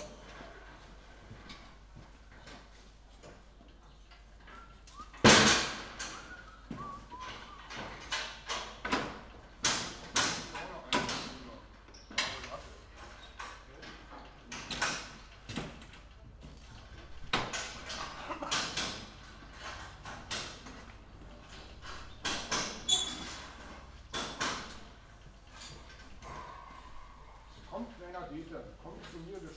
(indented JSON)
{
  "title": "Berlin Bürknerstr., backyard window - scaffolders at work",
  "date": "2014-08-07 15:35:00",
  "description": "scaffolders at work\n(Sony PCM D50)",
  "latitude": "52.49",
  "longitude": "13.42",
  "altitude": "45",
  "timezone": "Europe/Berlin"
}